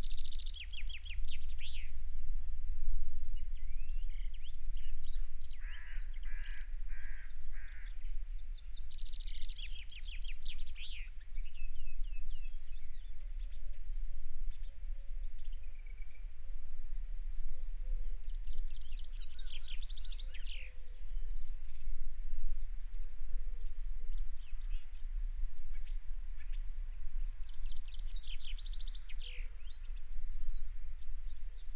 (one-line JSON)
{"title": "Dartmoor: Haytor lower Car Park 8.30am - Haytor lower Car Park 8.30am", "date": "2011-03-23 11:57:00", "description": "recorded at Haytor lower Car Park at 8.30am waiting for other participants to show p.", "latitude": "50.58", "longitude": "-3.75", "altitude": "334", "timezone": "Europe/London"}